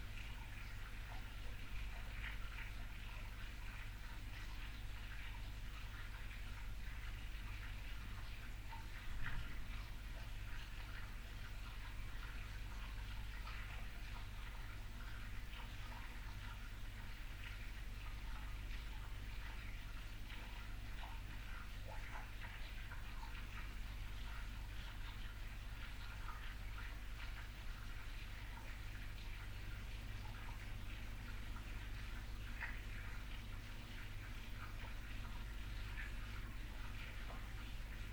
Lachania, Rhodos, Griechenland - Lahania, Rhodos, at night
Soundscape of Lahania village at 04:30 in the morning. Still dark, no sign of the dawn. Calm, no wind. Every now and then distant dogs, then all at once a distant aeroplane, cocks start crowing, a Scops Owl and some dogs join in. After a while all calms down again. Binaural recording. Artificial head microphone set up on the terasse. Microphone facing south east. Recorded with a Sound Devices 702 field recorder and a modified Crown - SASS setup incorporating two Sennheiser mkh 20 microphones.